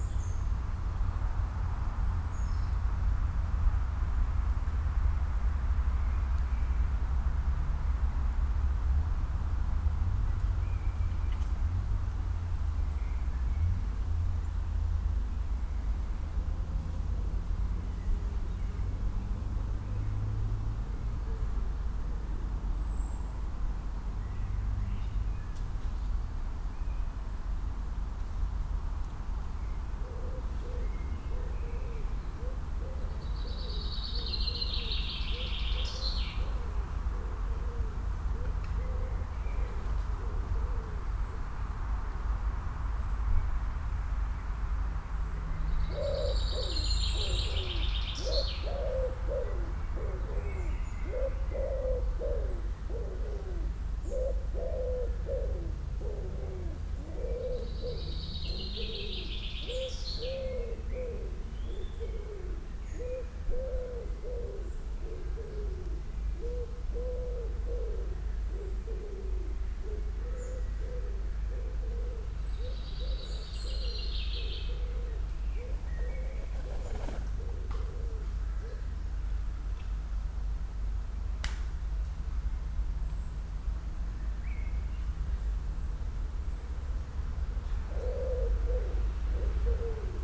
Holt County Park, Edgefield Hill, Holt - Holt County Park
Holt Country Park is set in 100 acres of mixed woodland. Bird noise, distant traffic rumble, aircraft passes overhead.
Recorded with a Zoom H1n with 2 Clippy EM272 mics arranged in spaced AB.
East of England, England, United Kingdom